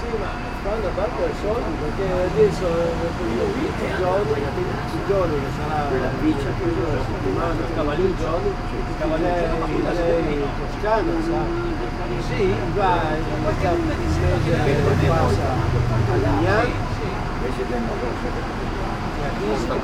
coffee bar close to the street, seniors talking triestino and enjoying ”nero”
project ”silent spaces”
Trieste, Italy